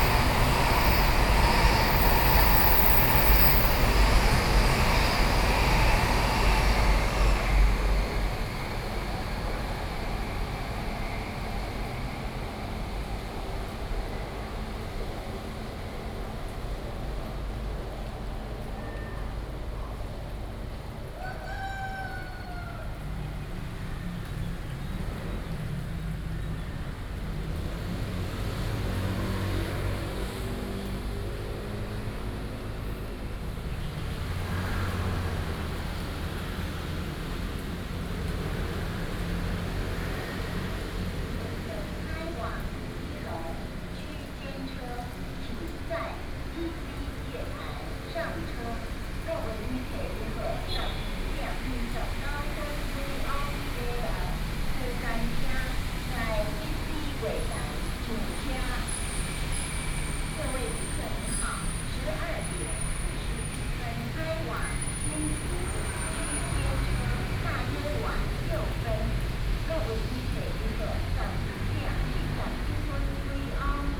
Fuzhou Station, Banqiao Dist., New Taipei City - in the station platform
in the station platform
Sony PCM D50+ Soundman OKM II
Banqiao District, New Taipei City, Taiwan, June 2012